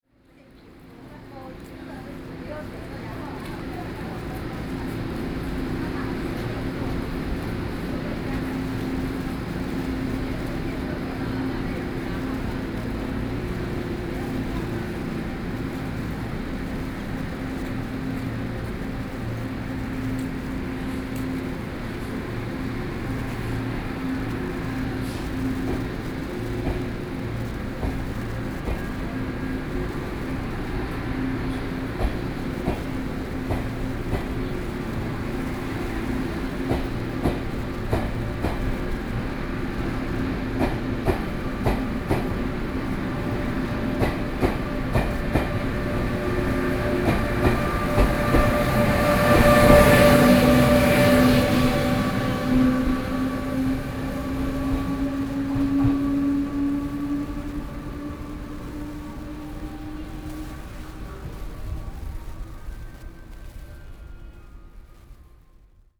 Taoyuan Station, Taoyuan City - soundwalk
From the lobby to the station platform, Sony PCM D50 + Soundman OKM II